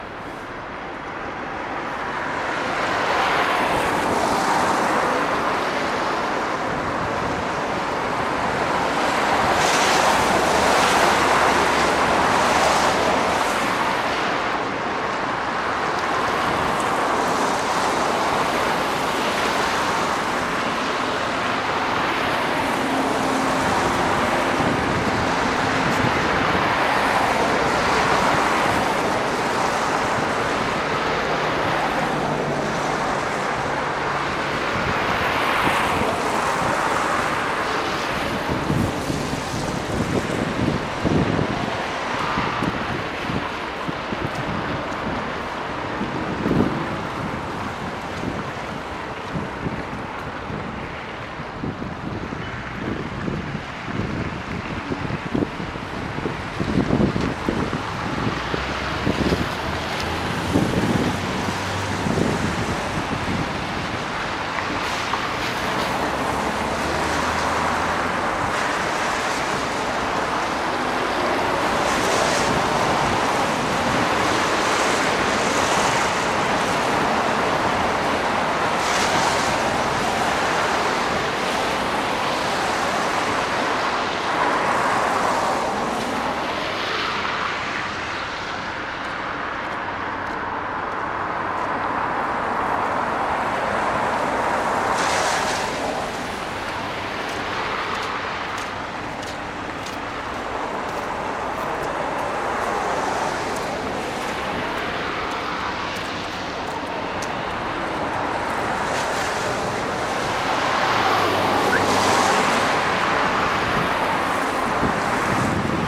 ул. Антикайнена, Петрозаводск, Респ. Карелия, Россия - At the Central Market public transport stop on Antikainen Street
At the "Central Market" public transport stop on Antikainen Street. You can hear cars driving on wet asphalt, people footsteps, some wind. It's a day. Warm winter.